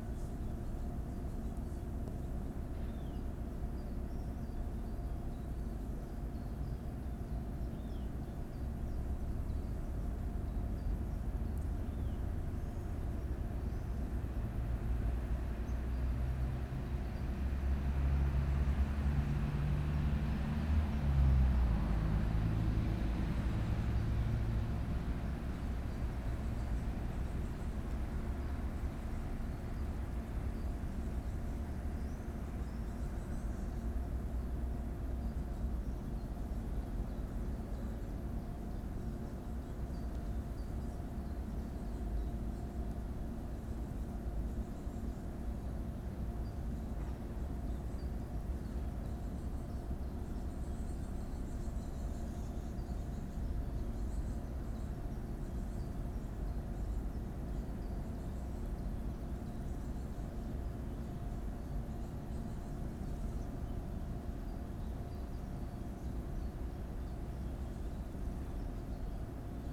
Lithuania, Kloviniai, at cell tower
buzzing of transformers and other (natural/unnatural) sounds
Lietuva, European Union, 3 July, 14:55